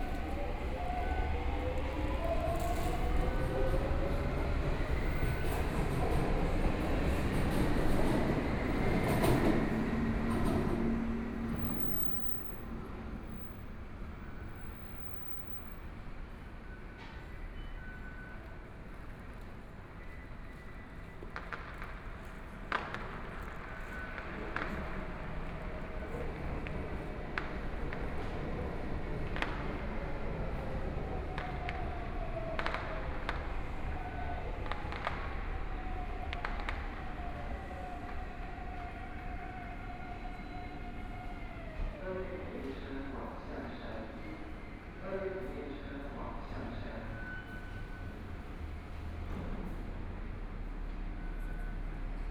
Qiyan Station, Taipei - On the platform
On the platform, Trains arrive at the station, Train leaving the station, Firecrackers, Fireworks sound, Clammy cloudy, Binaural recordings, Zoom H4n+ Soundman OKM II
10 February 2014, ~17:00